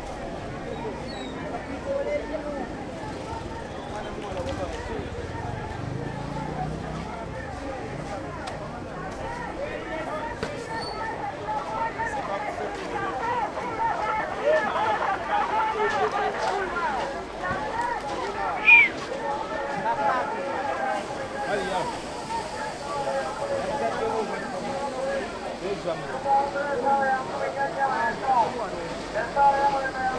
owinomarket, Kampala, Uganda - owino out
walking in owinomarket, recorded with a zoom h2